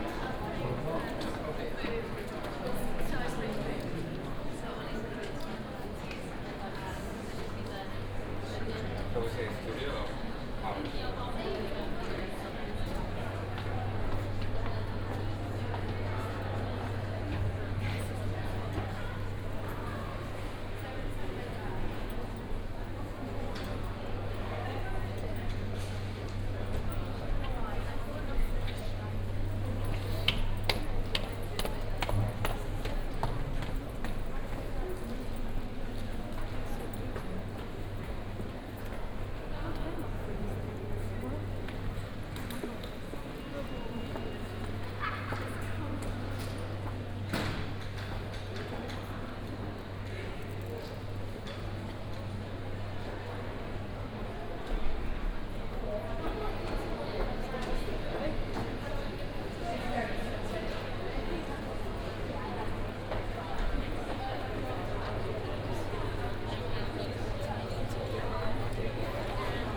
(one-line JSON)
{"title": "Oxford Brookes University - Headington Campus, Gipsy Lane, Oxford - forum ambience", "date": "2014-03-11 16:40:00", "description": "walking in the forum cafe at Oxford Bookes University campus.\n(Sony PCM D50, OKM2)", "latitude": "51.75", "longitude": "-1.23", "altitude": "102", "timezone": "Europe/London"}